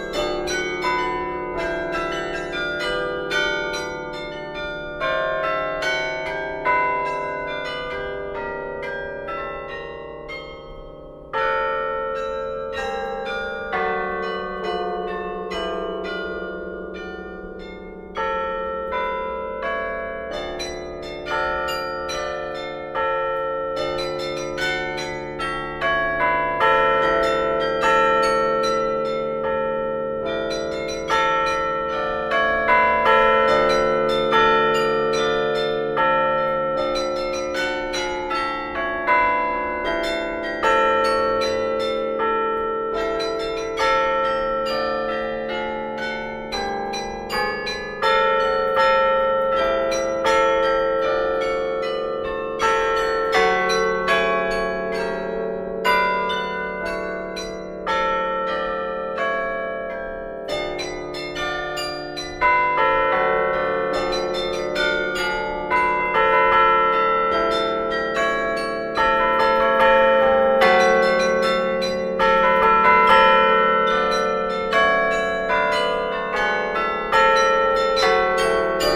Tournai, Belgique - Tournai carillon
Pascaline Flamme playing at the Tournai carillon, in the belfry. It's a beautiful instrument.